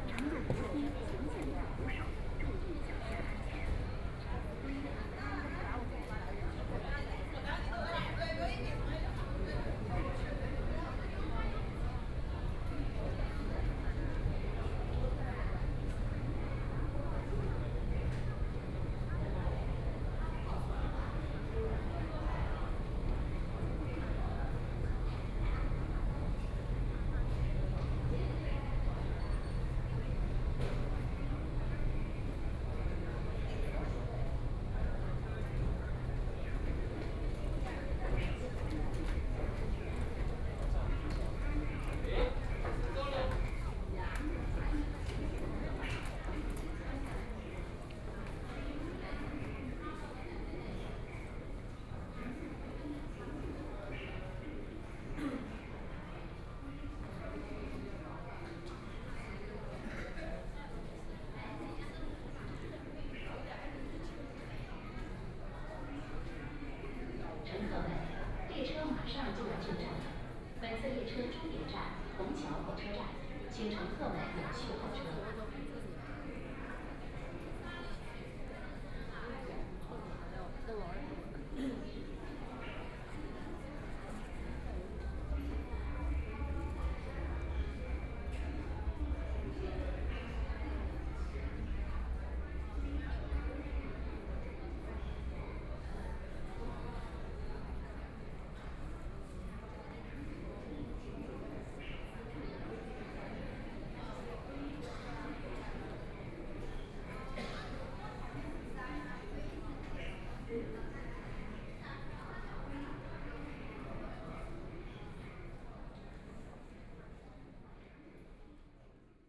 East Nanjing Road Station - walking in the Station
walking in the Station, Transit station, The crowd, Binaural recording, Zoom H6+ Soundman OKM II